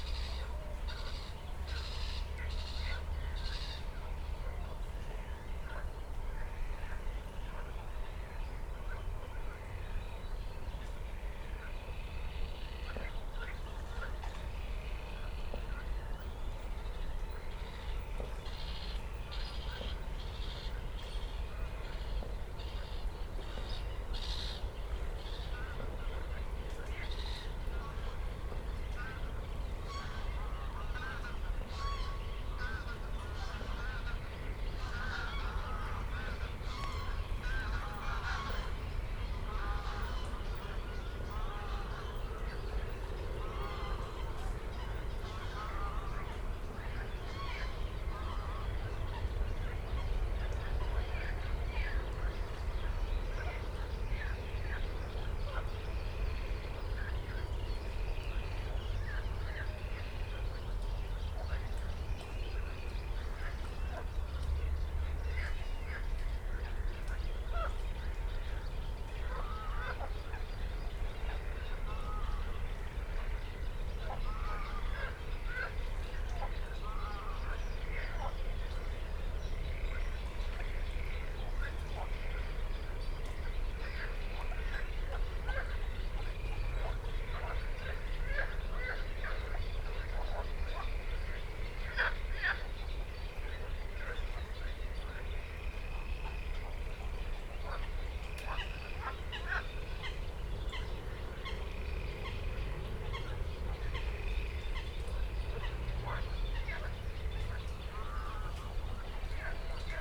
Moorlinse, Kleine Wiltbergstraße, Berlin Buch - birds, frogs, train
place revisited on warm evening in late spring
(Sony PCM D50, DPA 4060)
Berlin, Germany, 16 June, 22:30